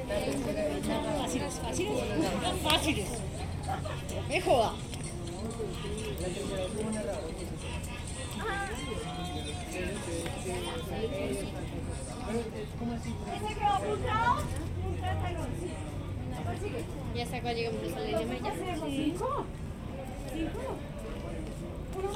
Cl., Bogotá, Colombia - Interludios U Pedagógica 2022
Es la jornada 2022 de Interludios, un espacio de reflexión y creación desde las artes escénicas, dramaturgias y sonoras.
Registrado en formato bianual con Zoom H3-VR